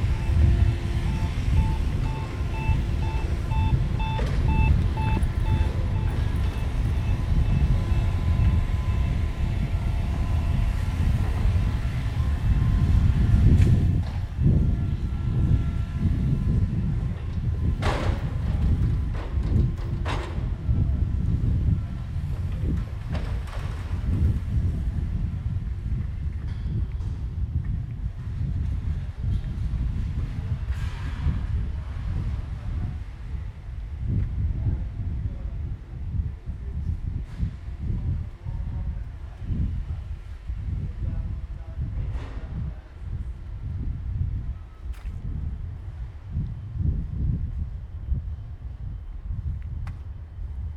traffic, construction site noise Kunsthalle Mannheim